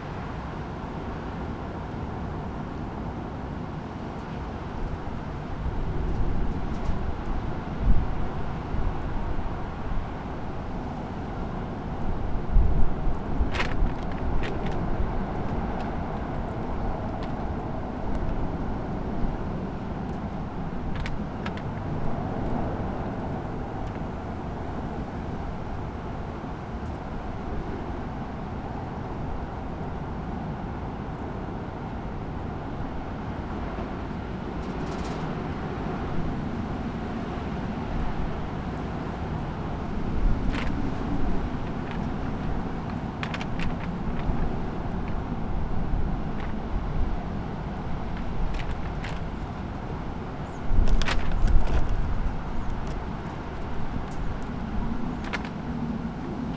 Poznan, balcony - wind arrival
strong wind over the city. a pile of papers flapping their pages and a plastic container moving in the wind.
13 April 2015, ~08:00